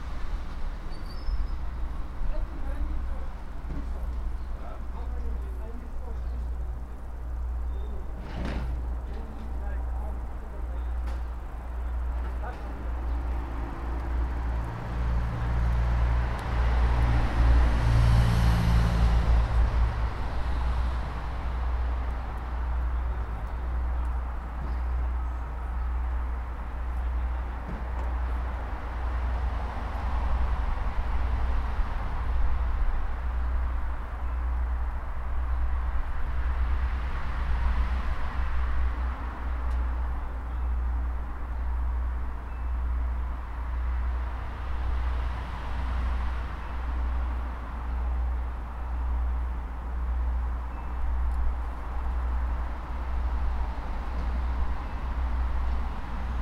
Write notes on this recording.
Listening to recycling. #WLD2018